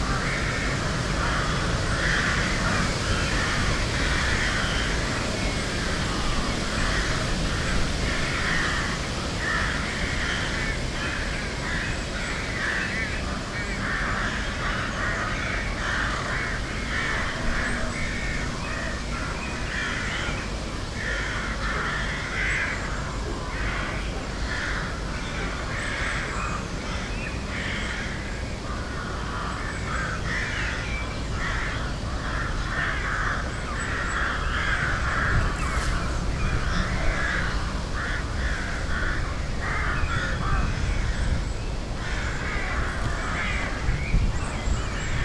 cakovice, park, rooks
Colony of nesting rooks, one of the biggest in Prague.
27 May 2011, ~13:00